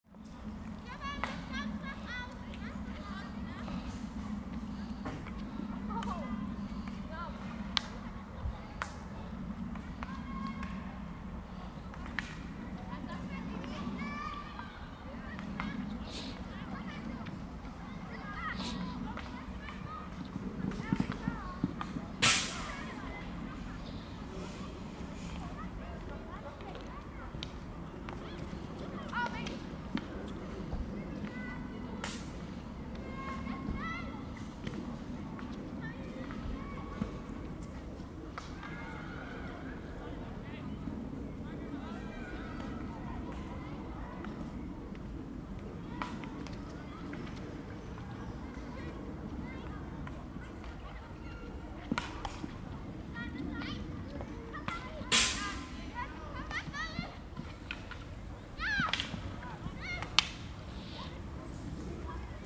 koeln, field-hockey
recorded june 20th, 2008.
project: "hasenbrot - a private sound diary"
Cologne, Germany